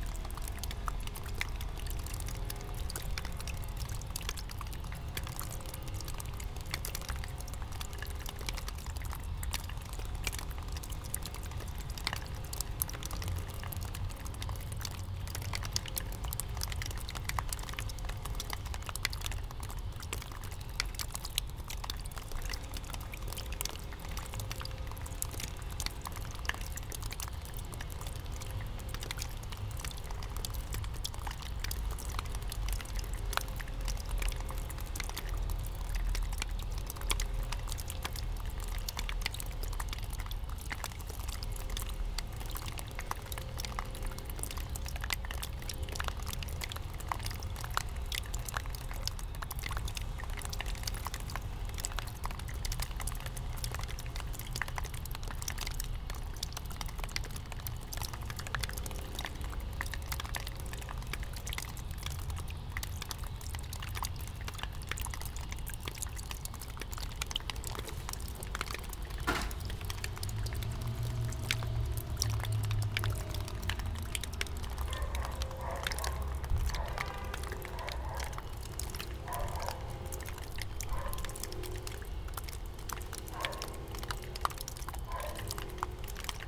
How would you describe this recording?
some wet, slushy snow melting off a roof and dripping into a puddle at the base of a concrete block wall. I'm walking on what's left of the crunchy snow and ice. We can hear some construction noises reflected off the wall. Recorded with an Olympus LS-10 and LOM mikroUši + windbubbles